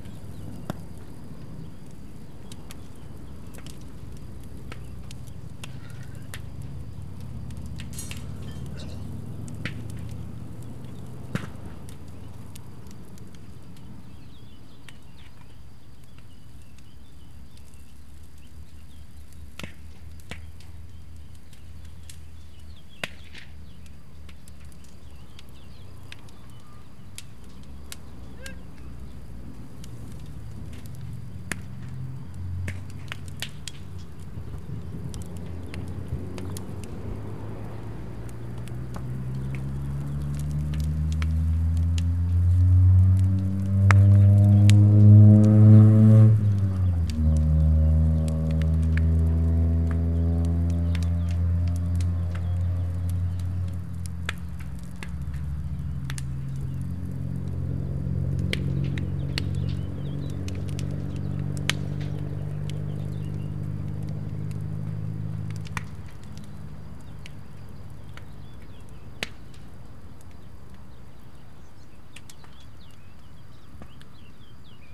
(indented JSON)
{
  "title": "Parque Dos Bravos, R. Dr. Alfredo da Silva Sampaio, São Bento, Portugal - Echoes",
  "date": "2019-08-11 12:09:00",
  "description": "Echo in space as they made a bonfire to burn leaves and pruning waste.",
  "latitude": "38.66",
  "longitude": "-27.20",
  "altitude": "88",
  "timezone": "Atlantic/Azores"
}